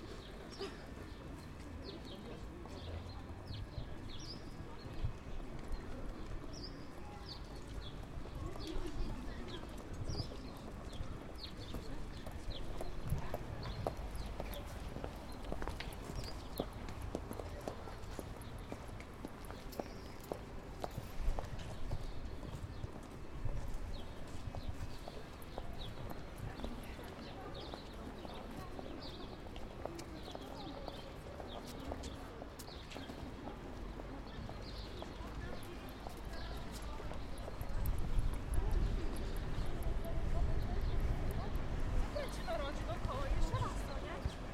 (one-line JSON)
{"title": "Rynek Kosciuszki, Bialystok, Poland - main square ambience", "date": "2013-05-24 09:37:00", "latitude": "53.13", "longitude": "23.16", "altitude": "141", "timezone": "Europe/Warsaw"}